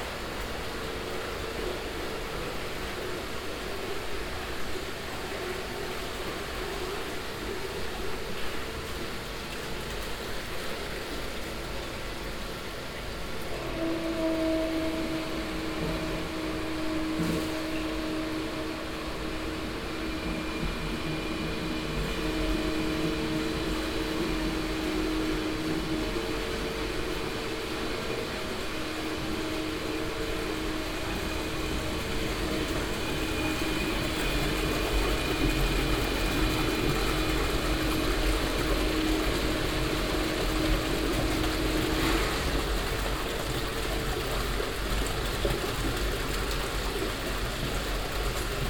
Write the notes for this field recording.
At a small local beer brewery. First: The general atmosphere with sounds of the machines and water pumps. you can find more informations about the location here: Thanks to Thomas the brew master for his kind support. Heinerscheid, Cornelyshaff, Brauerei, In einer kleinen regionalen Brauerei. Zunächst: die allgemeine Atmosphäre mit Geräuschen von den Maschinen und Wasserpumpen. Dank an den Braumeister Thomas für seine freundliche Unterstützung. Heinerscheid, Cornelyshaff, brasserie, Une petite brasserie locale. En premier : L’atmosphère générale avec des bruits de machines et des pompes à eau. Des informations supplémentaires sur ce lieu sont disponibles ici : Nos remerciements au maître brasseur Thomas pour son aimable soutien.